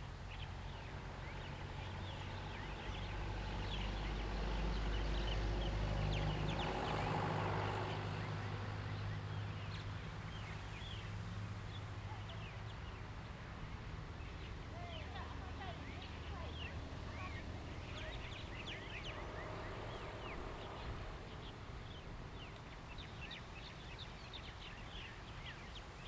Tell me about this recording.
Birds singing, Wind, In the Square, Zoom H2n MS+XY